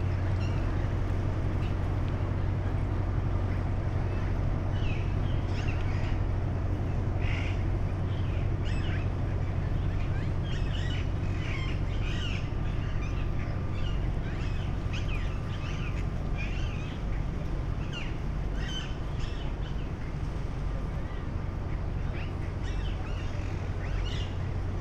Mülheim, Cologne, Germany - pedestrian bridge, evening ambience, parakeets
Köln, Mülheim, pedestrian bridge at the harbour, parakeets (Psittacula krameri) in the trees, quite common in Cologne. Pedestrians, bikers, a ship passing by
(Sony PCM D50, Primo EM172)
Köln, Germany, 30 August 2016, 20:05